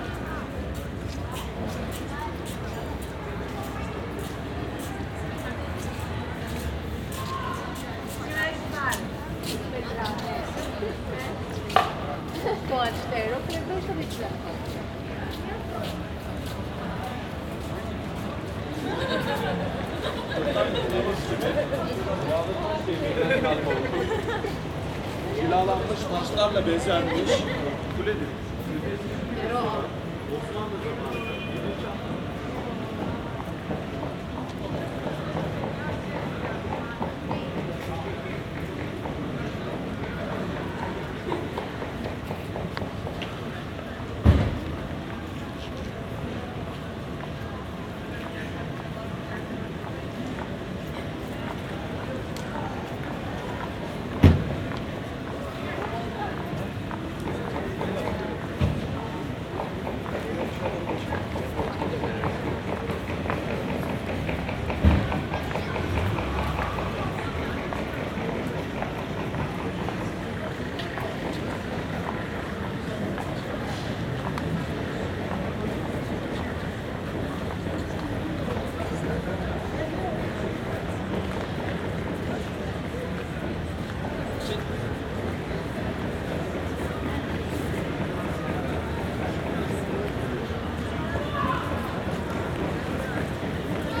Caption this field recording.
Istanbul ambient soundscape on a Sunday afternoon at the Galata Tower plaza, binaural recording